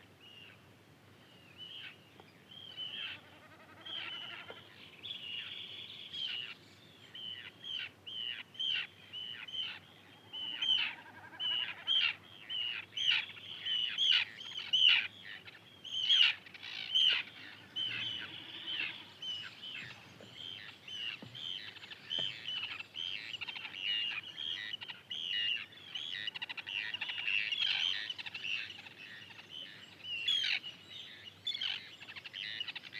Arnarstapavegur, Arnarstapi, Iceland - Local Birds, Summer, Morning time
Local Birds, Summer, Morning time